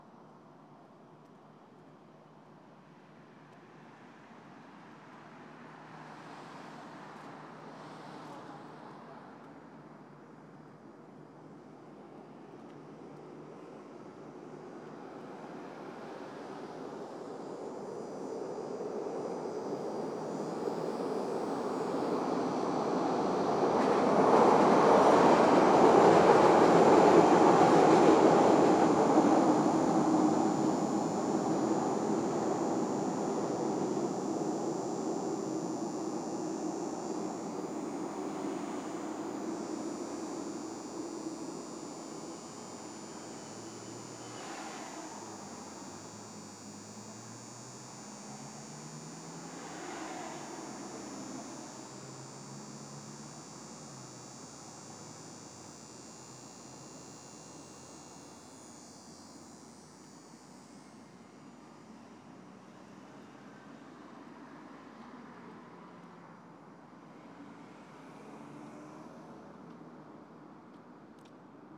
West Loop, Chicago, IL, USA - buzzzzz
High pitched buzz from meter in front of building Green Line train above.